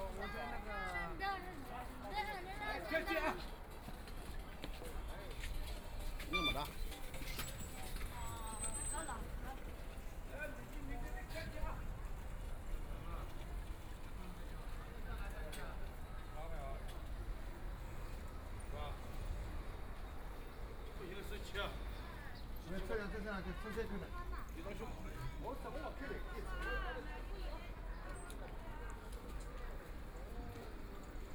LU Hong Road, Shanghai - soundwalk
Evening Market, The sound of the street under construction, Walking in the narrow old residential shuttle, Binaural recording, Zoom H6+ Soundman OKM II